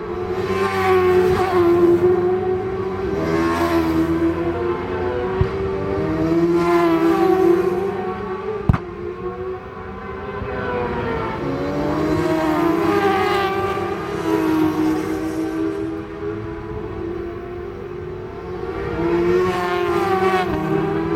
Leicester, UK - british superbikes 2002 ... supersport 600s ...
british superbikes 2002 ... supersports 600s practice ... mallory park ... one point stereo mic to minidisk ... date correct ... time not ...